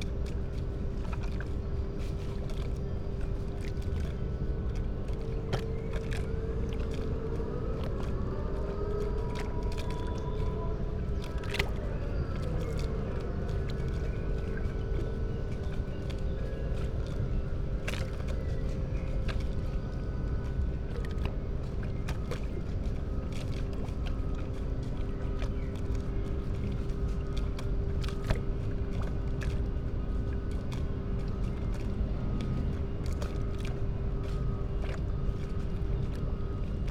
Berlin, Plänterwald, Spree - Saturday evening soundscape
the concrete factory never sleeps. distant music of a techno party, distant thunder rumble
(SD702, MKH8020)
Berlin, Germany, June 2016